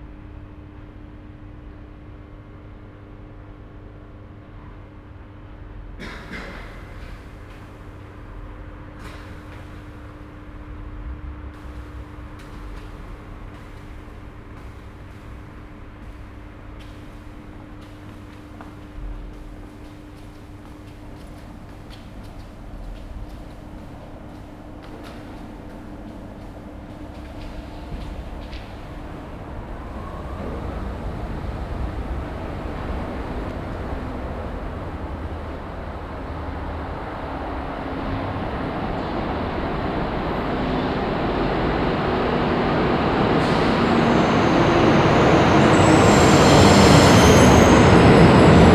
Südviertel, Essen, Deutschland - essen, philharmonie, subway station
In der U- Bahn Station Essen Philharmonie. Der Klang eines Fahrscheinautomatens, Schritte auf den Treppen, das Anlaufen der Rolltreppen, das Ein- und Ausfahren von Zügen.
Inside the subway station. The sound of a ticket, vending machine, then steps, the start of the moving staircases, trains driving in and out of the station.
Projekt - Stadtklang//: Hörorte - topographic field recordings and social ambiences
Essen, Germany, 3 June 2014